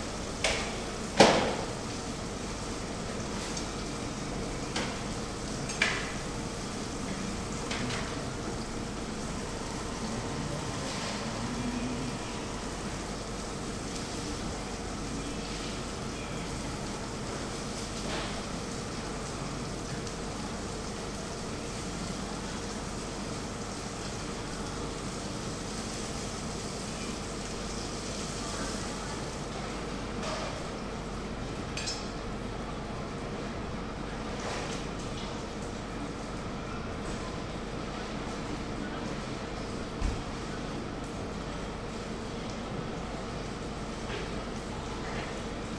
berlin, hermannplatz: warenhaus, imbiss - the city, the country & me: snack bar at karstadt department store
the city, the country & me: june 3, 2008
Berlin, Germany, 15 June 2008